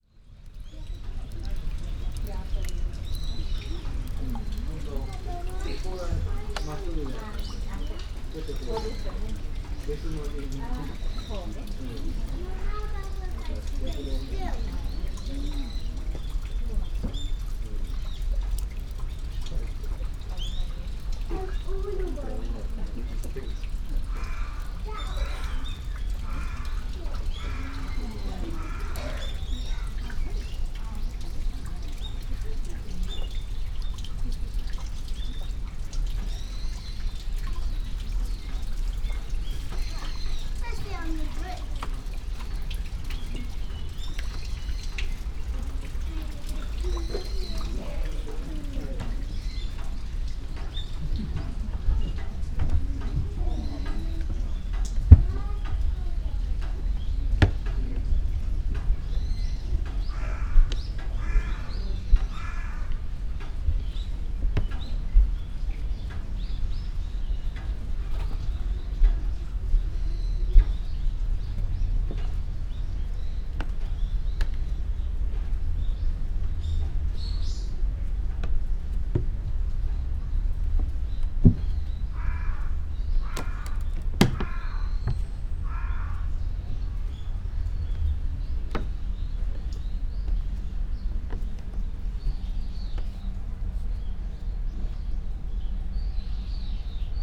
garden, Chishakuin temple, Kyoto - rain, drops, crow, steps, murmur of people
gardens sonority
veranda, wooden floor, steps